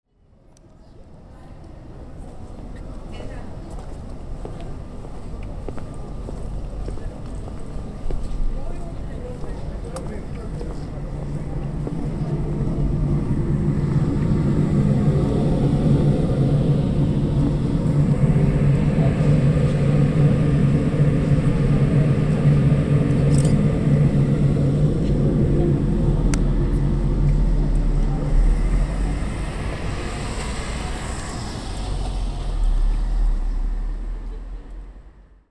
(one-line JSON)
{"title": "Swedenborgsgatan, fan noise", "date": "2011-07-17 14:34:00", "description": "A few steps around the corner of the house makes the noise disappear completely. Recorded for World Listening Day 2011.", "latitude": "59.32", "longitude": "18.06", "altitude": "40", "timezone": "Europe/Stockholm"}